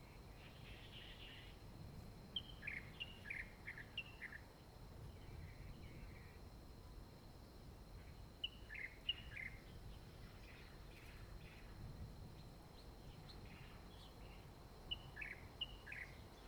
台26線龍坑, Hengchun Township - Birds
Birds sound, traffic sound, Beside the road
Zoom H2n MS+XY
Pingtung County, Hengchun Township, 台26線200號, 23 April 2018, 07:30